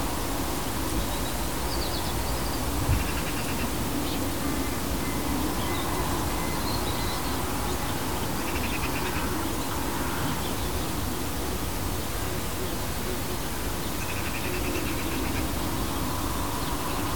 Ballyrusley Rd, Newtownards, UK - Nothing much
Maybe some sheep and bees
Tascam DR40, built-in mics